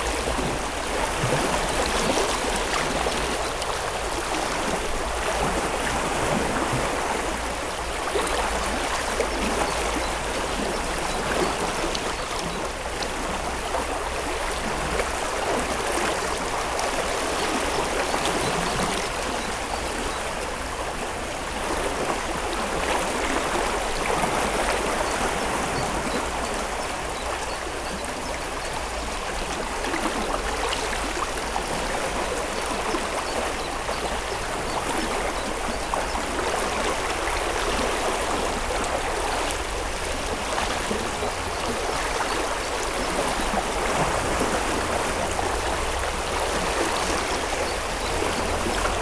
Court-St.-Étienne, Belgique - Flood
The city was flooded during the night, because of a very big storm in the city of Genappe. On the morning, waves are irregular and powerful in the river.
Court-St.-Étienne, Belgium, 8 June 2016, 07:05